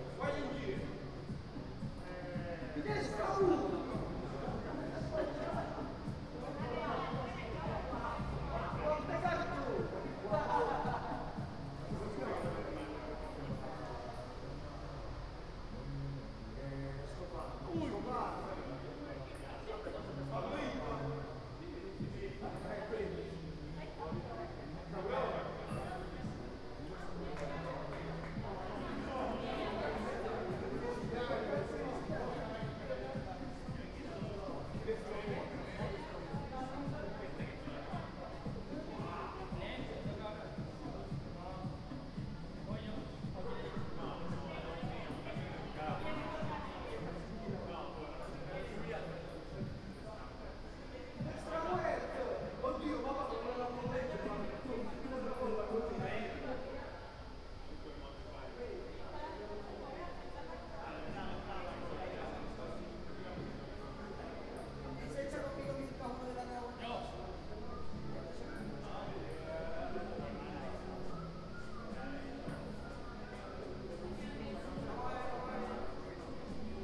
Ascolto il tuo cuore, città, I listen to your heart, city. Several chapters **SCROLL DOWN FOR ALL RECORDINGS** - Round midnight students college party again in the time of COVID19 Soundscape
"Round midnight students college party again in the time of COVID19" Soundscape
Chapter CXXXIV of Ascolto il tuo cuore, città. I listen to your heart, city
Saturday, October 3nd 2020, five months and twenty-two days after the first soundwalk (March 10th) during the night of closure by the law of all the public places due to the epidemic of COVID19.
Start at 11:49 p.m. end at 01:26 a.m. duration of recording 35’29”
Piemonte, Italia